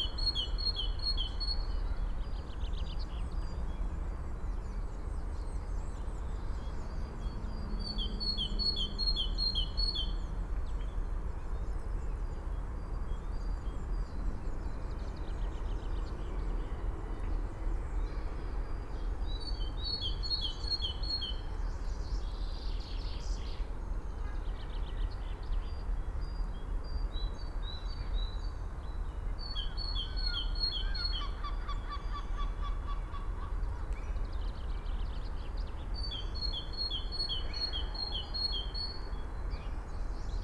Fisksätra Holme - Le train train des oiseaux
Les oiseaux de Fiskisland ne sont pas perturbé par le train.
30 April 2013, ~13:00